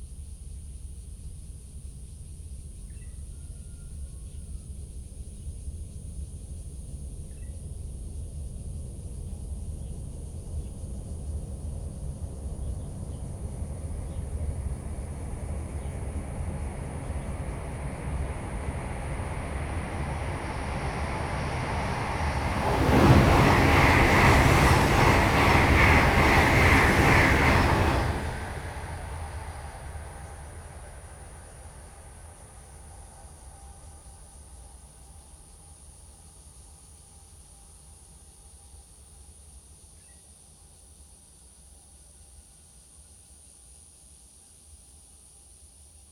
Near the tunnel, birds call, Cicadas sound, High speed railway, The train passes through, Zoom H2n MS+XY
17 August 2017, ~09:00